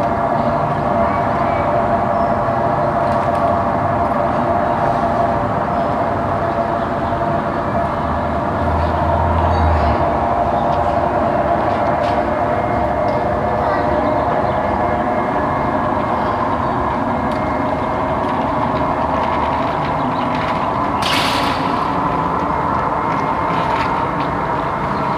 haan, von eigen strasse, aussenlüftung
lüftungsresonanten zwischen zwei gebäuden, morgens im frühjahr 07
soundmap nrw:
social ambiences, topographic fieldrecordings, listen to the people